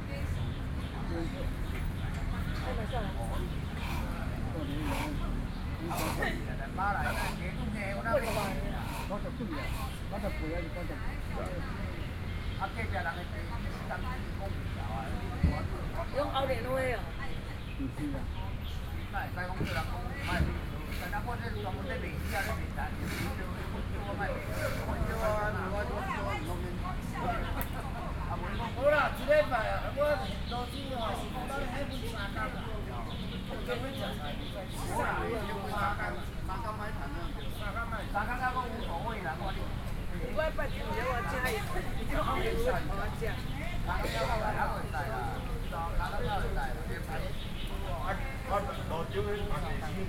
{"title": "New Taipei City, Taiwan - In the park", "date": "2012-11-04 09:29:00", "latitude": "25.08", "longitude": "121.48", "altitude": "15", "timezone": "Asia/Taipei"}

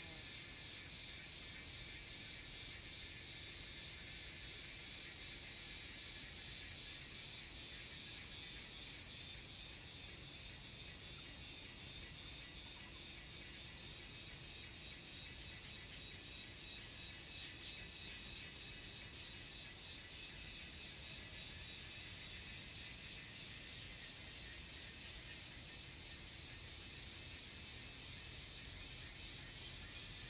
{"title": "桃米里, Nantou County, Taiwan - Cicada and Bird sounds", "date": "2016-06-07 13:08:00", "description": "Cicada sounds, Bird sounds, Faced with bamboo\nZoom H2n MS+XY", "latitude": "23.96", "longitude": "120.92", "altitude": "615", "timezone": "Asia/Taipei"}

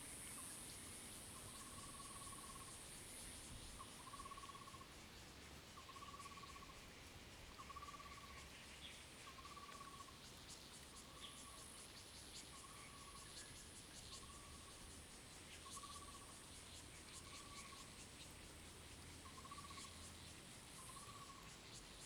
{"title": "Taimali Township, Taitung County - Birdsong", "date": "2014-09-05 10:05:00", "description": "Birdsong\nZoom H2n MS +XY", "latitude": "22.61", "longitude": "120.98", "altitude": "554", "timezone": "Asia/Taipei"}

